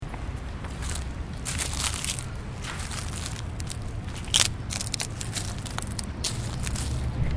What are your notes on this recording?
Stepping on branches and leaves